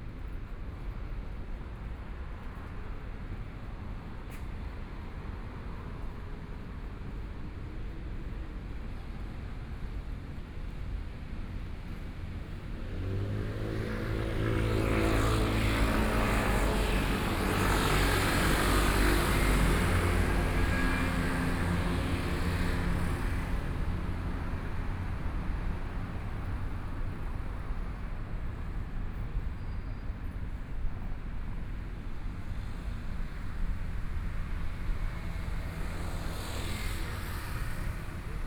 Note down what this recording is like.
Walking through the park, Frogs sound, Tennis sounds